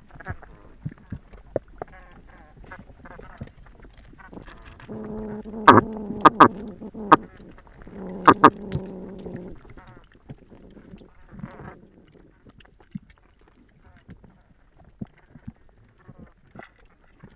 Hidrophone recording at River Gilão
Tavira, Portugal, 22 August 2010